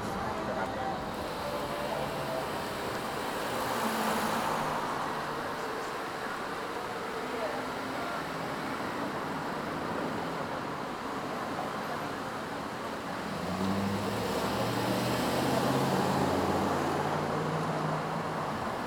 This is the main intersection of downtown New Paltz. It was taken outside the local Starbucks during a time where traffic was continuous and people were walking freely downtown. The recording was taken using a Snowball condenser mic with a sock over top to reduce the wind. It was edited using Garage Band on a MacBook Pro.
NY, USA, 28 October 2016, 16:00